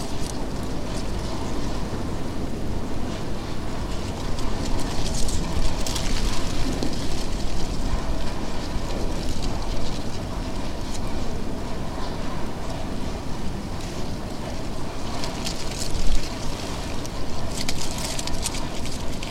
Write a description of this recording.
Wind in dry leaves in the bus in the middle of the ambit of the Monastery of Dominicans at Old Town.